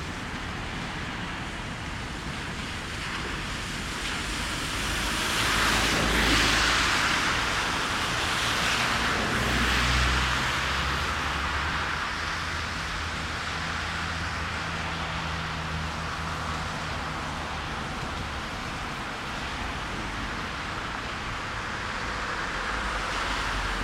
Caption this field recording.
I often pass near railroad tracks and I regret not having my recorder with me, but this time, I did. There is a sense of expectative given by the sound signal of the barrier. The train is just two carriages long, so there isn't much rhythmic track noise, but...finally got it :) Recorded with Superlux S502 Stereo ORTF mic and a Zoom F8 recorder.